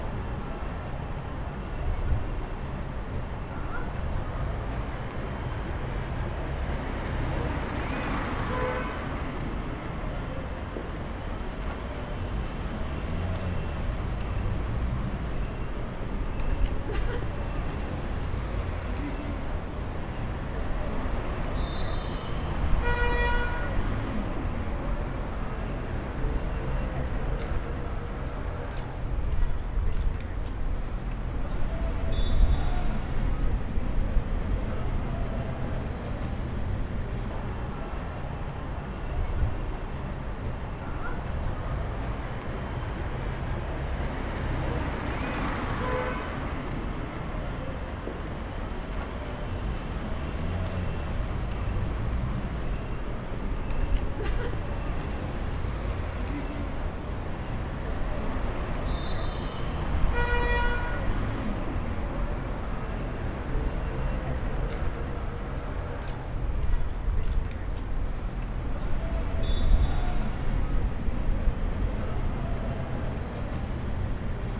Sint-Jans-Molenbeek, Belgium - Avenue Roovere
Just behind the building the sounds of the 'carrefour' are dimmed, easier to hear the quiet presence of people walking by.